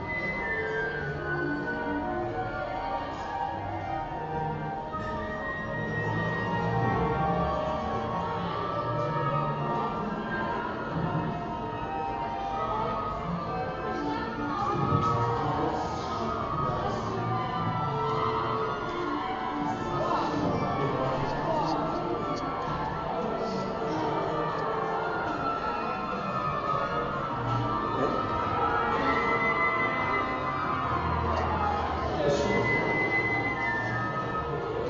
Ein studentisches Orchesters stimmt seine Instrumente. Es klingt.